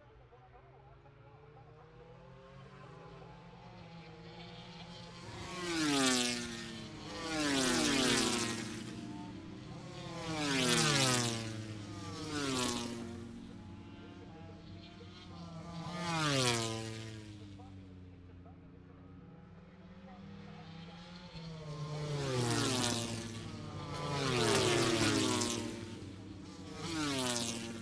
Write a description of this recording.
moto grand prix free practice two ... maggotts ... dpa 4060s to Zoom H5 ...